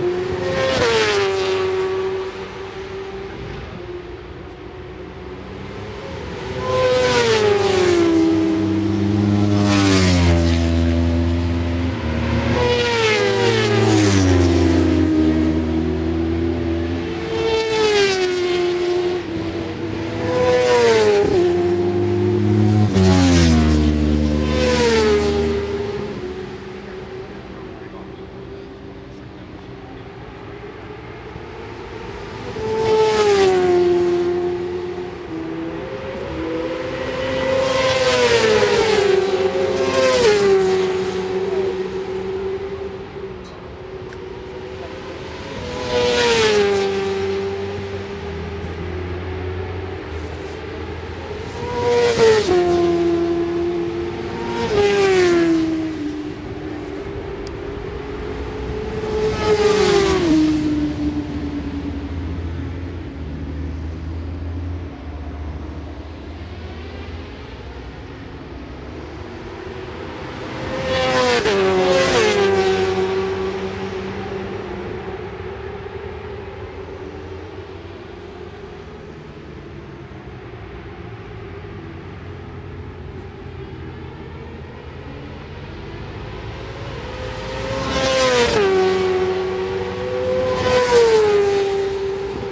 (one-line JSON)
{"title": "Brands Hatch GP Circuit, West Kingsdown, Longfield, UK - WSB 1998 ... Supersports 600s ... FP3 ...", "date": "1998-08-01 12:30:00", "description": "WSB 1998 ... Supersports 600s ... FP3 ... one point stereo mic to minidisk ...", "latitude": "51.36", "longitude": "0.26", "altitude": "151", "timezone": "Europe/London"}